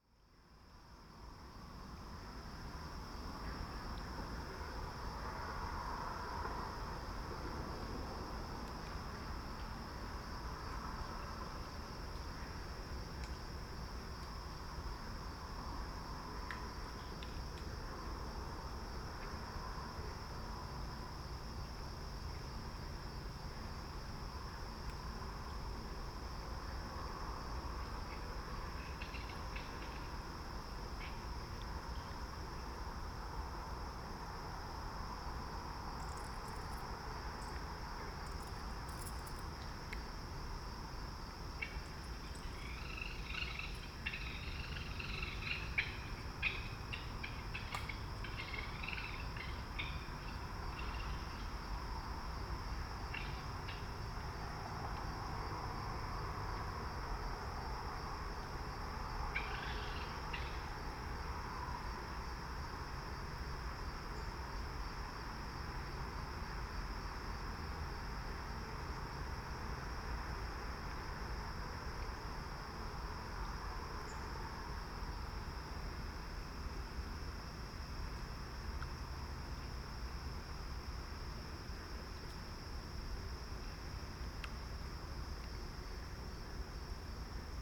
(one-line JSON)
{"title": "Brighton, MI raccoons, Winans Lake", "date": "2010-07-18 02:10:00", "description": "World Listening Day 7/18/10, 2:10 AM. Winans Lake, Brighton MI. Raccoons, Green Frogs, Air Conditioners, Traffic.", "latitude": "42.47", "longitude": "-83.83", "altitude": "266", "timezone": "America/Detroit"}